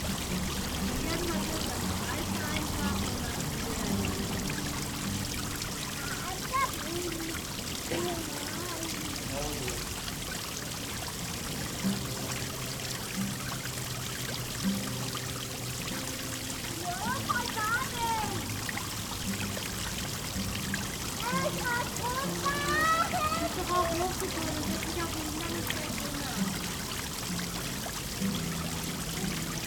Leuschnerdamm, Engelbecken - indischer Brunnen / indian fountain
indischer Brunnen im Engelbecken, Kinder, Musiker spielt Gitarre.
Der Luisenstädtische Kanal ist ein historischer innerstädtischer Kanal in der Berliner Luisenstadt, der die Spree mit dem Landwehrkanal verband. Er wurde 1852 eröffnet und verlief durch die heutigen Ortsteile Kreuzberg und Mitte. 1926 wurde der Kanal teilweise zugeschüttet und in eine Gartenanlage umgestaltet. Mit dem Mauerbau im Jahr 1961 verlief bis 1989 entlang des nördlichen Teils des Kanals die Grenze zwischen Ost- und West-Berlin. Seit 1991 wird die seit dem Zweiten Weltkrieg zerstörte Gartenanlage abschnittsweise rekonstruiert.
indian fountain at Engelbecken, former Louisenstadt canal, children, musician